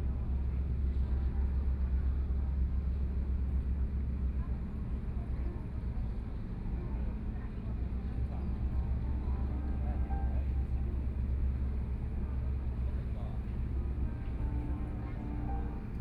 漁人碼頭, Kaohsiung City - In the dock
In the dock, china Tourists, Sound from Ferry
Sony PCM D50+ Soundman OKM II